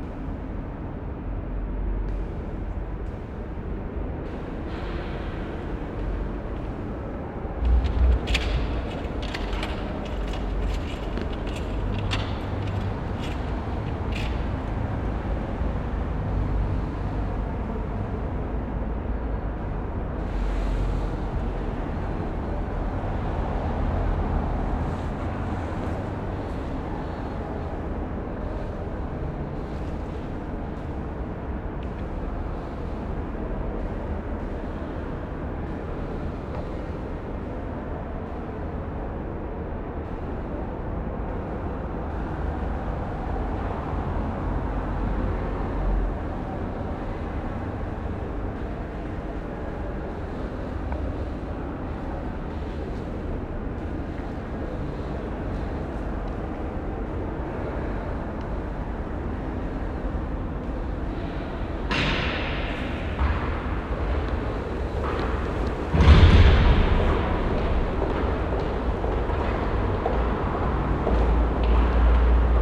Unterbilk, Düsseldorf, Deutschland - Düsseldorf, Zollhof 11
Inside the wide, high and open, glass, steel and stone architecture. The ventilation, voices and the reverbing sounds of steps and doors in the central hall of the building.
This recording is part of the exhibition project - sonic states
This recording is part of the exhibition project - sonic states
soundmap nrw - sonic states, social ambiences, art places and topographic field recordings